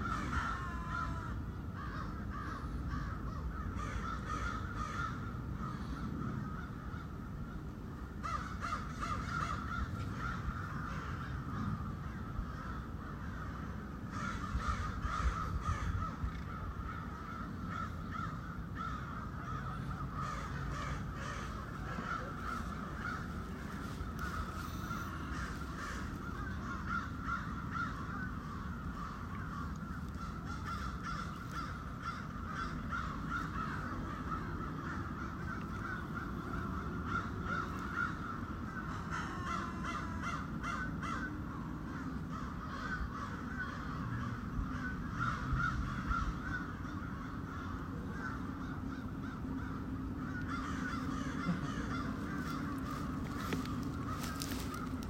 Summit Street, Trinity College - Main Quad: Crows
Recording of crows, they come out to the main quad routinely around 4pm.
10 January 2022, 04:30